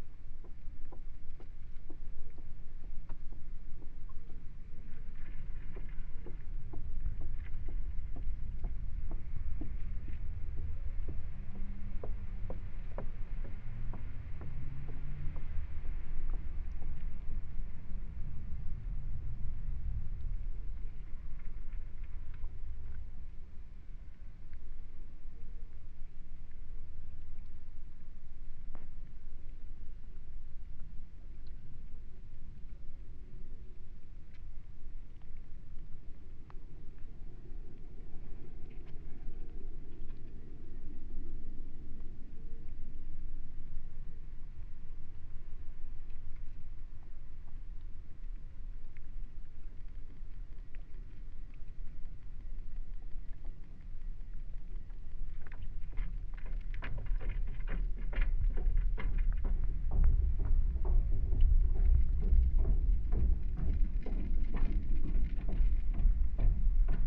{"title": "Buitenom-Prinsegracht Bridge, Den Haag - hydrophone rec under the bridge", "date": "2009-06-10 11:42:00", "description": "Mic/Recorder: Aquarian H2A / Fostex FR-2LE", "latitude": "52.07", "longitude": "4.30", "altitude": "7", "timezone": "Europe/Berlin"}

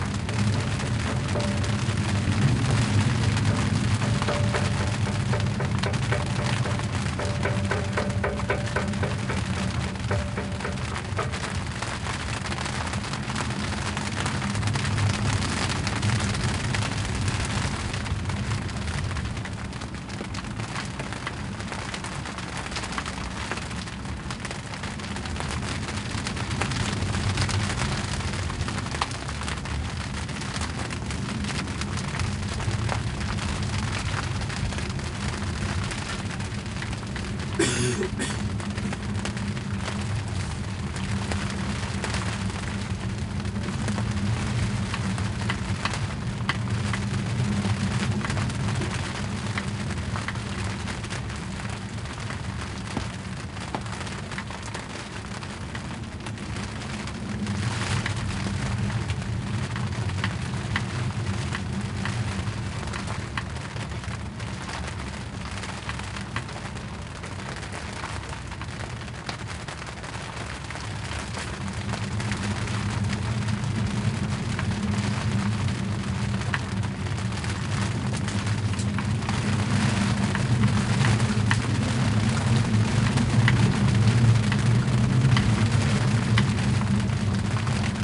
{"title": "Isleornsay, Skye, Scotland, UK - Waiting Out a Storm: Anchored (Part 2)", "date": "2019-07-07 20:39:00", "description": "Recorded with a pair of DPA 4060s and a Sound Devices MixPre-3", "latitude": "57.15", "longitude": "-5.80", "altitude": "1", "timezone": "Europe/London"}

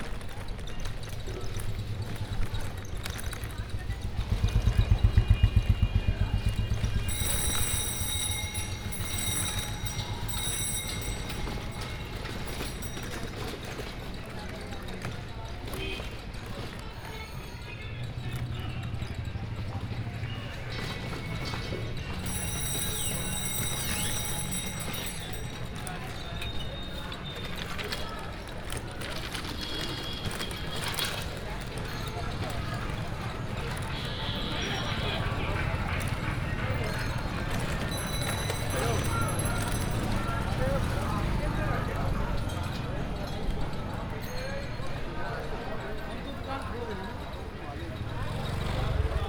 {"title": "varanasi: busy street - pedal rickshaw", "date": "2008-03-17 01:43:00", "description": "took a pedal rickshaw just for this recording on a busy street in varanasi - march 2008", "latitude": "25.29", "longitude": "83.00", "altitude": "81", "timezone": "Europe/Berlin"}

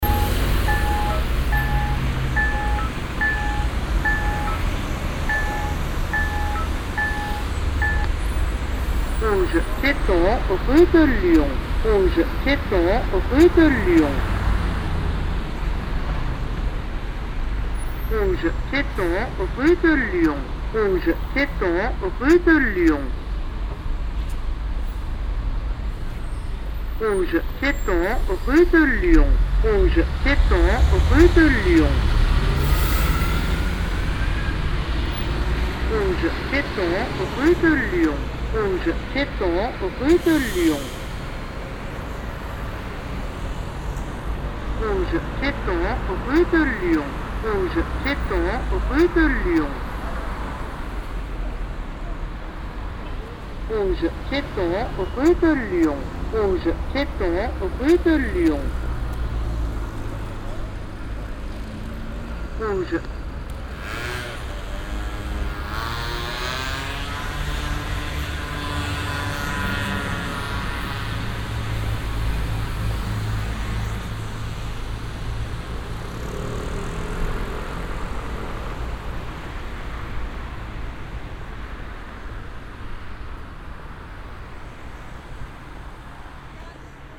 {"title": "paris, rue de lyon, traffic sign", "date": "2009-10-13 16:33:00", "description": "a talking traffic sign at a street crossing\ncityscapes international: socail ambiences and topographic field recordings", "latitude": "48.85", "longitude": "2.37", "altitude": "40", "timezone": "Europe/Berlin"}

